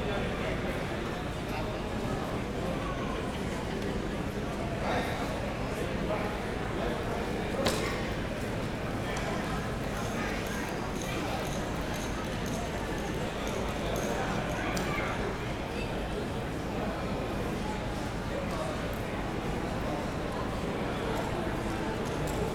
South Ferry Plaza, Whitehall St, New York, NY, USA - Staten Island Ferry Terminal, Lower Manhattan
Waiting area of the Staten Island Ferry Terminal.
14 April 2018, 09:30